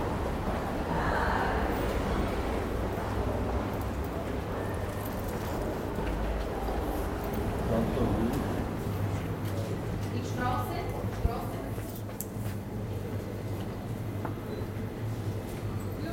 st. gallen (CH), main station, pedestrian subway - st. gallen (CH), main station, pedestrian underpass
recorded june 16, 2008. - project: "hasenbrot - a private sound diary"
Saint Gallen, Switzerland